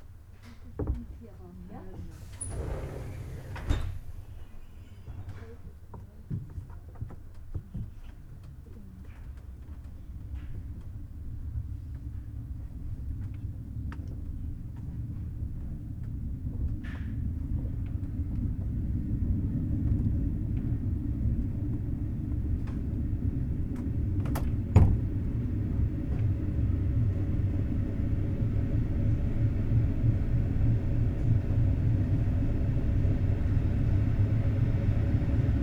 Viktor-Frankl-Straße, Kaufering, Germany - Regional train arriving and departing (from compartment)
From compartment, arrival in station, people embarking and departure
Capturé du compartiment. Arrivée en gare, voix de passagers et départ
15 February